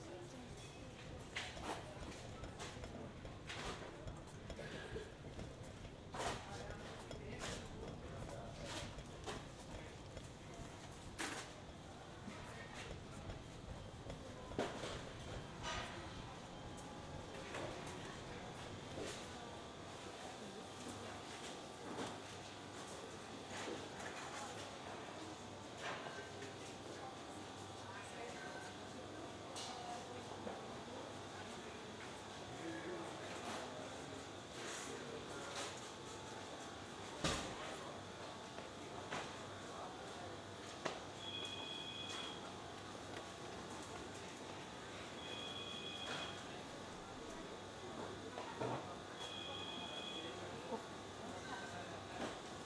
Molenstraat, Londerzeel, België - That Saturday in the Colruyt.
Zoom H-6 XY-mic on top of the groceries